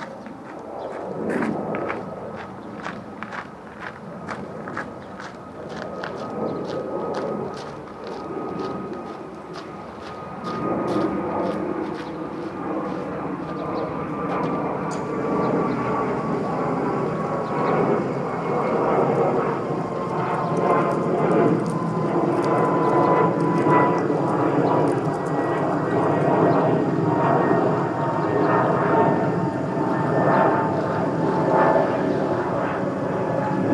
Cimetière de Niévroz, Niévroz, France - Cemetary ambience
Distant bells, footsteps.
Tech Note : Sony PCM-M10 internal microphones